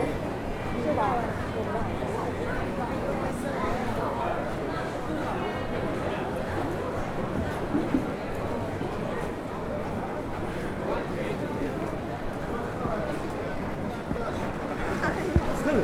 Zhabei, Shanghai, China - Shangai Train central station
general ambient of Shangai train station